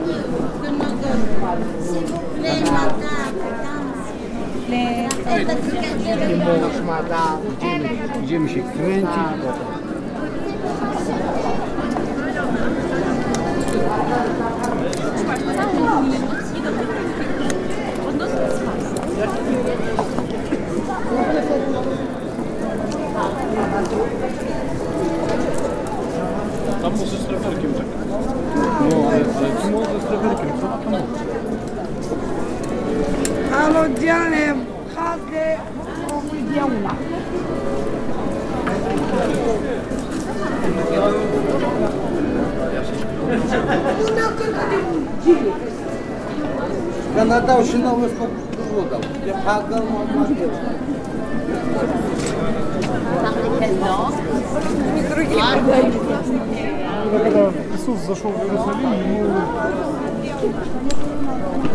In front of the entrance of the cathedral: Beggars wishing a nice sunday and asking for money, church visitors passing, a musician playing accordeon, a lady selling boxwood twigs for Palm Sunday.
Strasbourg, France, 13 April 2014, 13:10